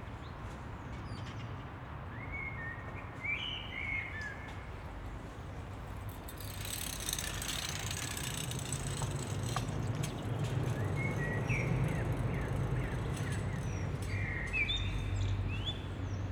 Am Justizzentrum, court, jobcenter, Köln - yard ambience
evening ambience in between housing area and court district / job center, few people passing-by, blackbirds, distant train. the area seems deserted after business hours.
(SD702, Audio Technica BP4025)
Nordrhein-Westfalen, Deutschland, European Union, April 2013